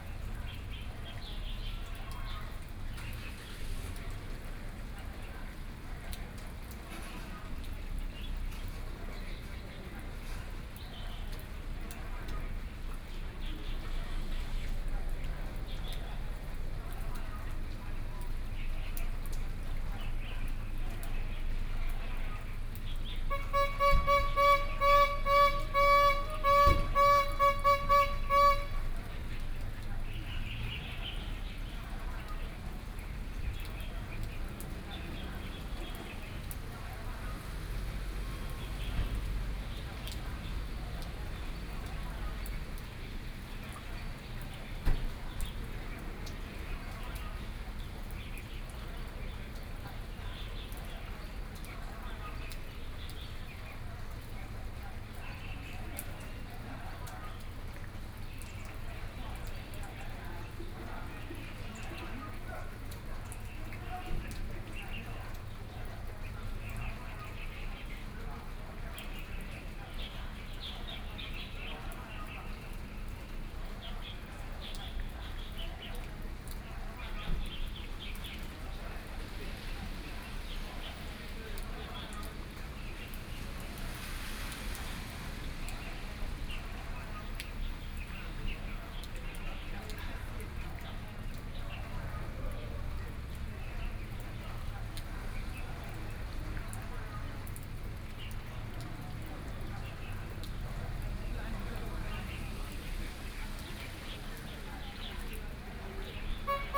Square in front of the station, Rainy Day, Selling ice cream sounds, The traffic sounds, Binaural recordings, Zoom H4n+ Soundman OKM II